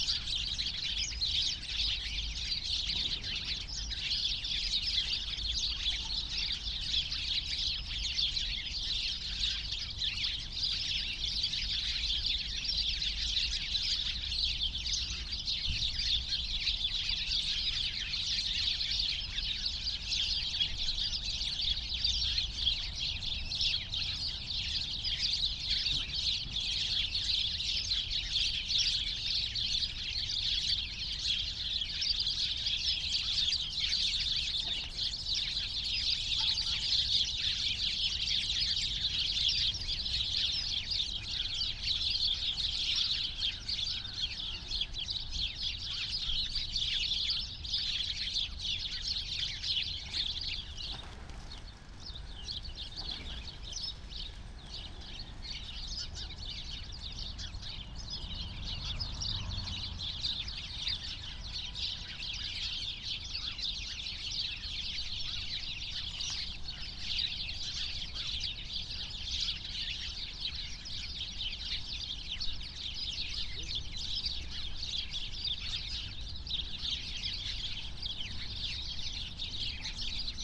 Berlin, Schinkestr., Spielplatz - playground ambience /w sparrows
many sparrows (Hausspatzen) in a bush at playground Schinkestrasse
(Sony PCM D50, AOM5024)
28 December 2020, 11:10